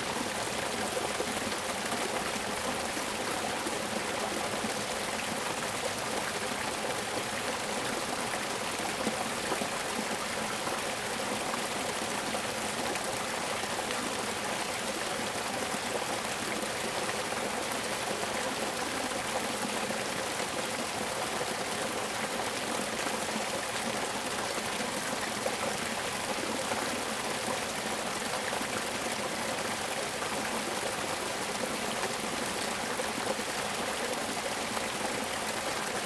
Park Springs Park, Bentonville, Arkansas, USA - Park Springs Park Spring
Park Springs Park spring
23 April 2022, ~14:00